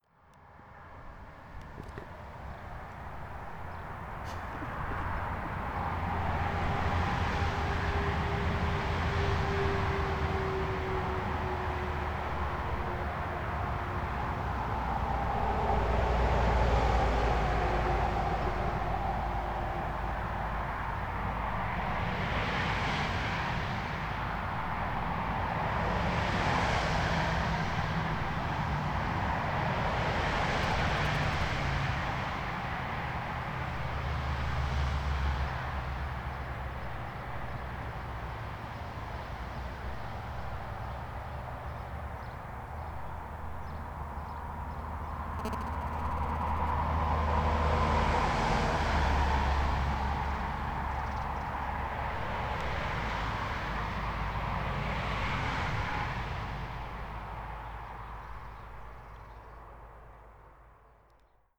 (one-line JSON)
{"title": "Schwäbisch Gmünd, Deutschland - B 29", "date": "2014-05-12 12:15:00", "description": "Bundesstraße (federal highway) near HfG", "latitude": "48.79", "longitude": "9.76", "altitude": "304", "timezone": "Europe/Berlin"}